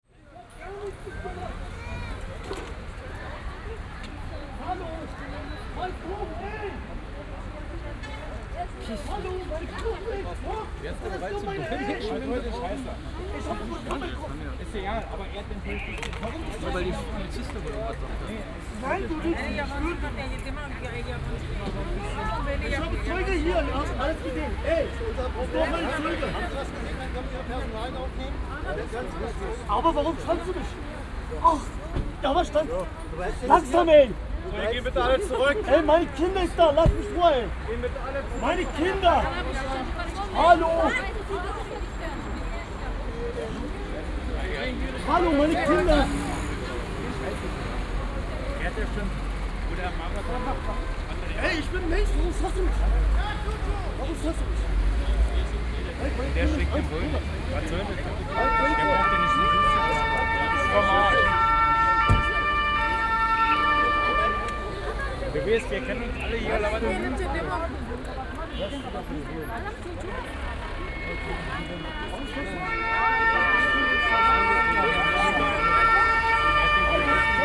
kottbusser damm, trouble - man fighting with police
27.09.2008 16:45
kottbusser damm after skater marathon, huge traffic chaos, two drivers obviously just have had a fight, one is attacking a policemen, which causes him trouble...
27 September, 16:45